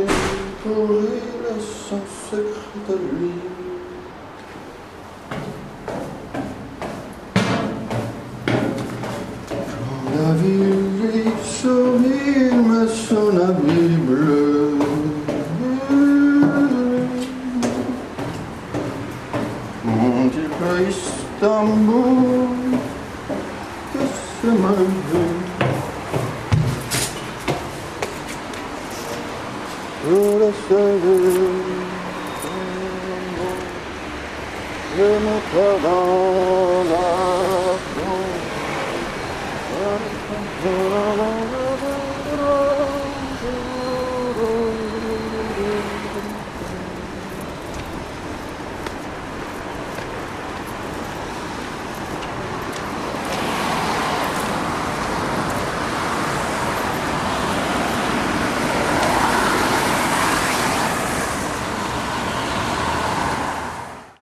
Wind has turned and now coming from Russia, as they say, bringing the winter and some melancholic melodies. As they say as well, who whistles, is calling the devil... but some people are willing to face him for the sake of their emergent state of mind.
17 October 2010